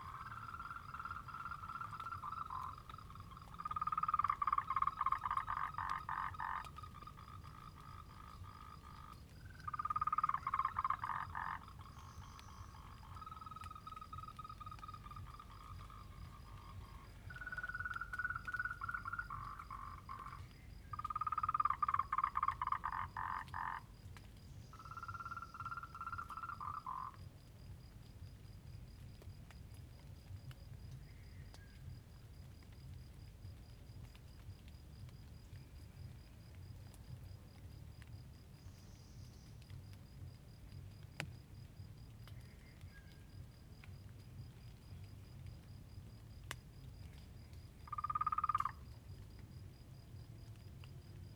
水上巷, 南投縣埔里鎮桃米里 - Frogs chirping
Prior to the recording and live sound of frogs
Zoom H2n MS+XY
Puli Township, 水上巷, 23 March 2016